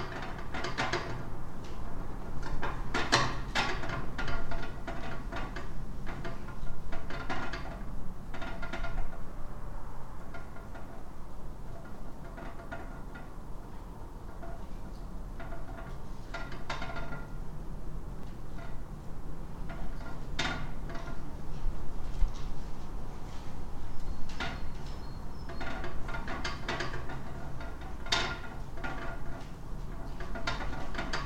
Antalgė, Lithuania, abandoned school 2nd floor
in the corridor of the 2nd floor of abandoned school